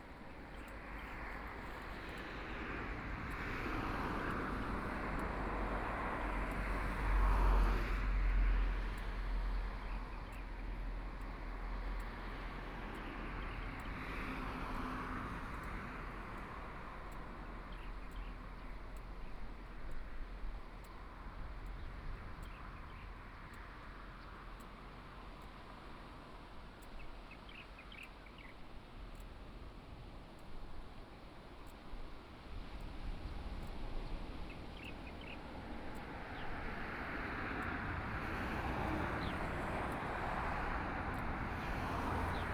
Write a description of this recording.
Traffic Sound, Birdsong, Sound of the waves, Very hot days